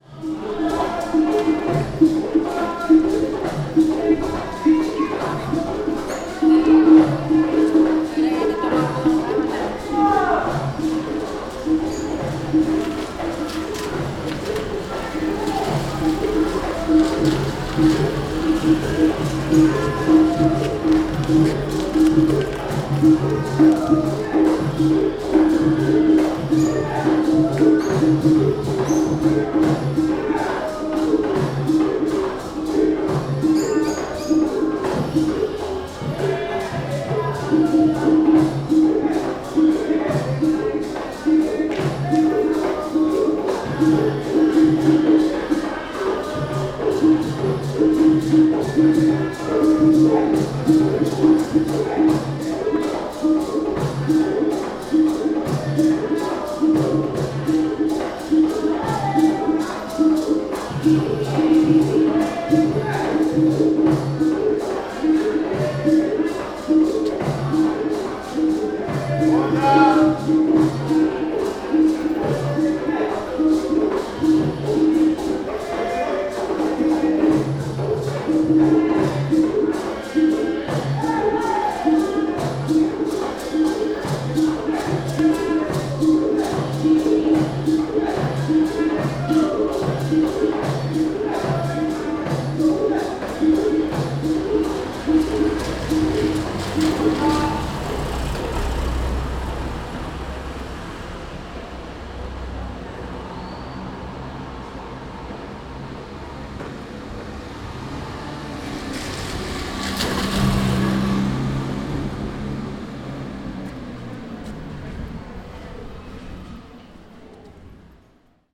Havana, Cuba - Santería drumming
Drumming on 3rd floor of an apartment building, heard from street outside. Zoom H2.
La Habana, Cuba